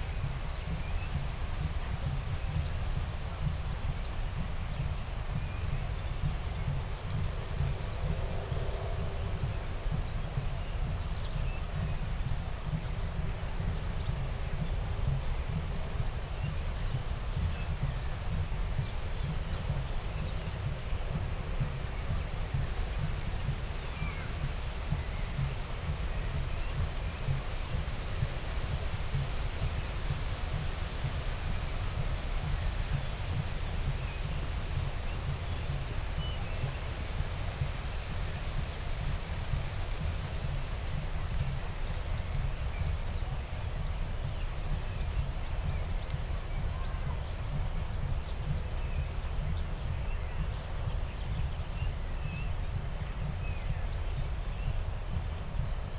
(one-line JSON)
{"title": "Tempelhofer Feld, Berlin, Deutschland - summer evening ambience", "date": "2019-08-05 19:55:00", "description": "early summer evening, a group of starlings in the distance\n(Sony PCM D50, DPA4060)", "latitude": "52.48", "longitude": "13.40", "altitude": "42", "timezone": "Europe/Berlin"}